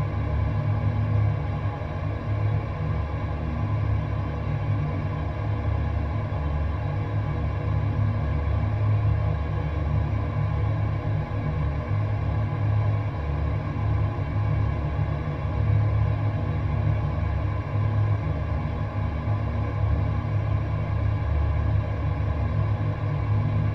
Kavarskas, Lithuania, dam bridge drone
contact microphones on a dam bridge
22 August 2017